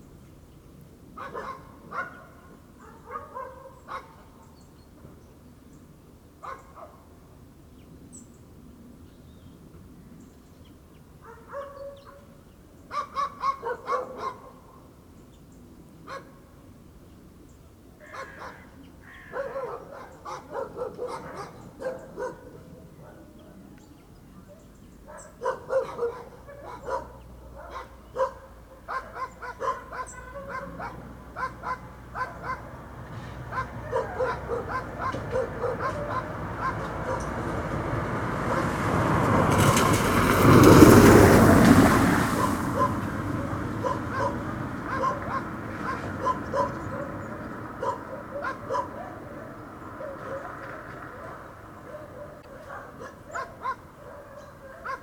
S.G. Bosco Street, Pavia, Italy - barking dogs
dogs barking in the country. a Car passes on the dirt road with puddles.
28 October, 11:15